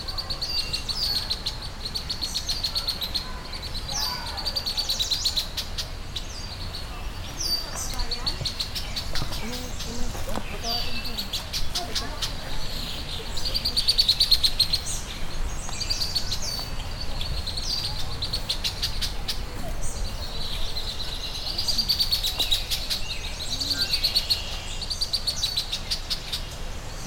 Pelee Shuttle Stop, Leamington, ON, Canada - At latitude 42
The object that can be seen at this location is a sign (approx. 150cm height) depicting the number 42, designating the geographical north latitude at which it is placed. Birds are a variety of warblers, thrushes, red-winged blackbirds, etc. returning on their Spring migration.
Zoom H6 w/ MS stereo mic head.
Ontario, Canada, 21 May 2022